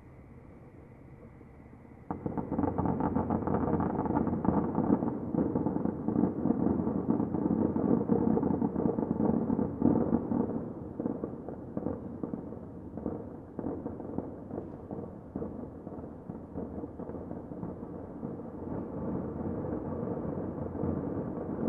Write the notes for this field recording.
Hearing fireworks in the distance - sounds like distant canons of a civil war - spooky!